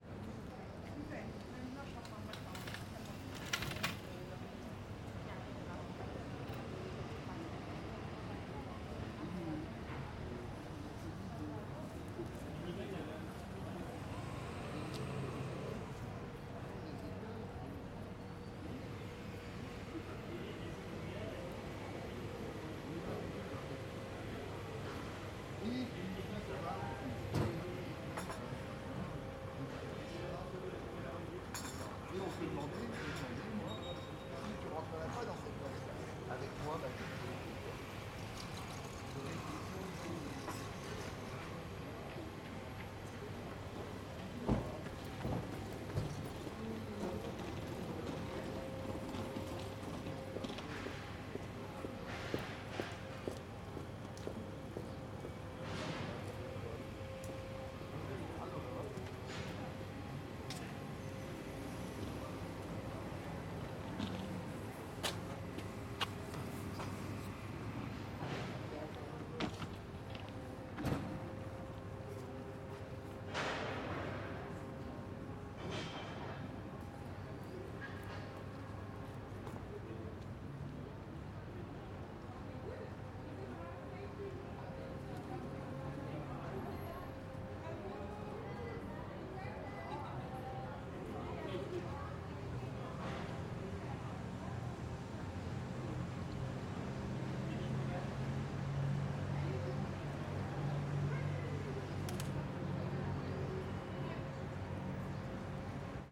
Rue Lucien Sampaix, Paris, France - AMB PARIS PLACE QUAI DE VALMY MS SCHOEPS MATRICED
This is a recording of the Quai de Valmy near to the Canal St Martin in Paris. I used Schoeps MS microphones (CMC5 - MK4 - MK8) and a Sound Devices Mixpre6.